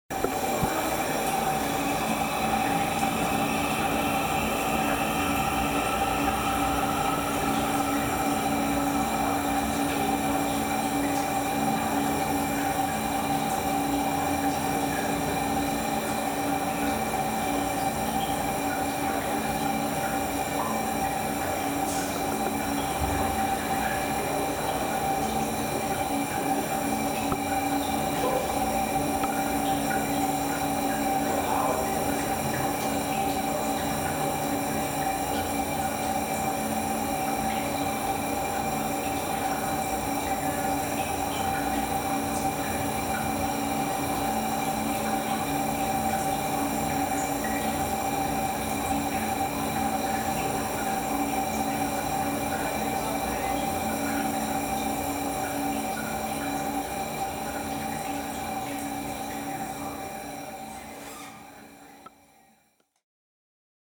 Inside the men's restroom at Haslet Station - the sound of water at the urinals
soundmap international:
social ambiences, topographic field recordings